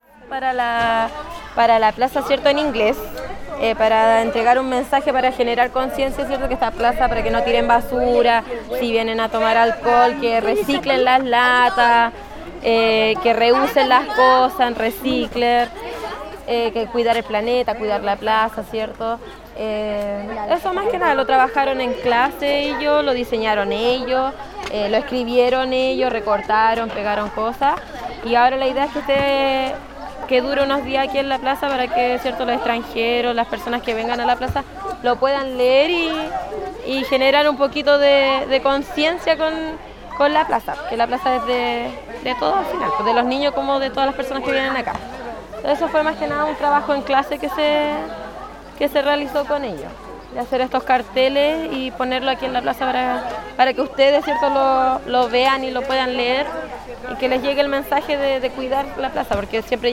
Plaza el Descanso, Valparaíso, Chile - the english teacher explains
the english teacher of the nearby school explains an environmental action of the kids, who are putting up poster and cardboards around the place, saying that people should take care about the planet in general and particularly this place
(Sony PCM D50)
Valparaíso, Región de Valparaíso, Chile, 1 December 2015